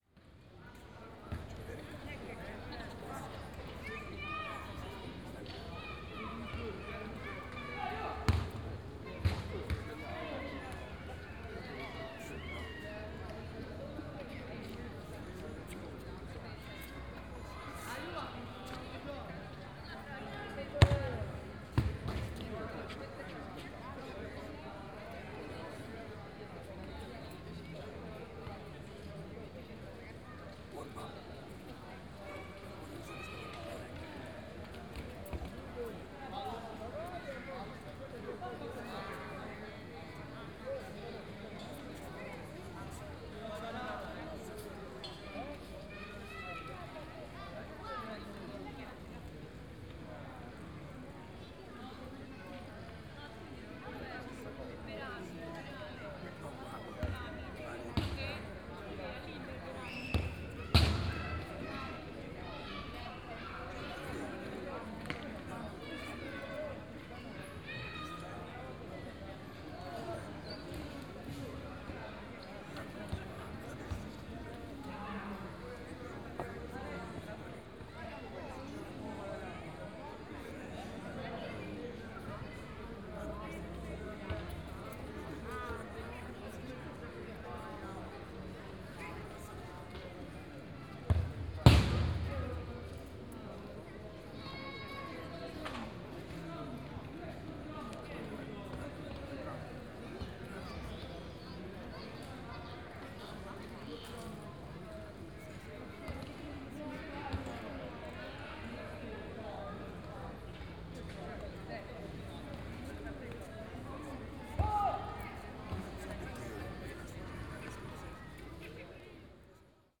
Rome, Trastevere district, San Cosimato square - soccer leisure time
a few teenagers kicking a soccer ball, which slams against metal vegetable stalls. two guys behind me talking about another guy doing bmx tricks on the square. many people relaxing and talking on the square.
Rome, Italy, 31 August 2014, ~17:00